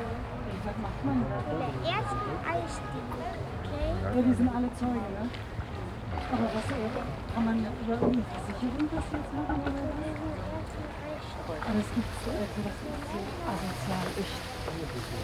Strandbad Süd, Düsseldorf, Deutschland - Düsseldorf, Unterbacher See, surf station
At the lake side on a sunny summer day. The sounds of people talking about a stolen bicycle, plus wind, seagulls and surfer on the lake.
soundmap nrw - social ambiences and topographic field recordings
Dusseldorf, Germany, 11 August 2013, 16:30